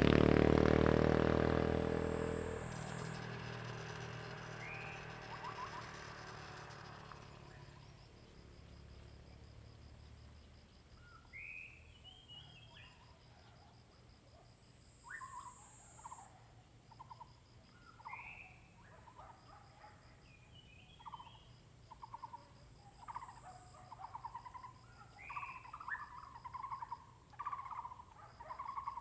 Hualong Ln., Yuchi Township - Bird calls
Bird sounds
Zoom H2n MS+XY